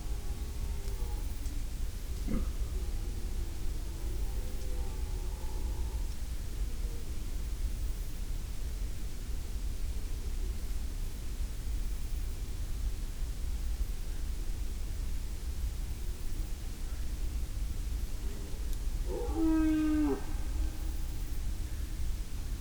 Opatje selo - Lokvica, 5291 Miren, Slovenia - Elk rut
Elk rutting. Lom Uši Pro, AB stereo array 50cm apart.
Slovenija, 4 September 2020